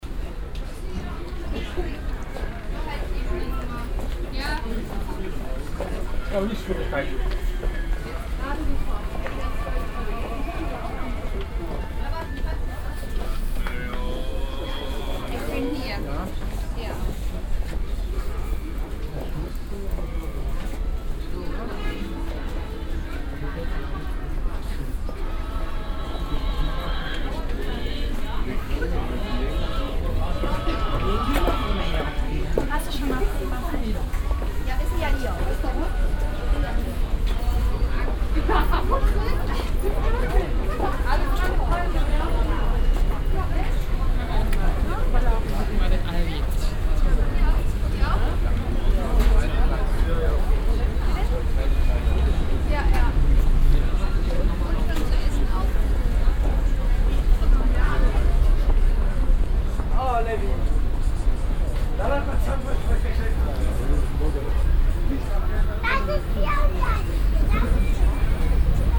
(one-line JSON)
{"title": "cologne, rudolfplatz, weihnachtsmarkt", "date": "2008-12-23 17:22:00", "description": "weihnachtsmarkt ambience am rudolfplatz mittags. hier: \"dreaming of a white christmas\" zwischen diversen fressständen und dem stadtverkehr\nsoundmap nrw - weihnachts special - der ganz normale wahnsinn\nsocial ambiences/ listen to the people - in & outdoor nearfield recordings", "latitude": "50.94", "longitude": "6.94", "altitude": "57", "timezone": "Europe/Berlin"}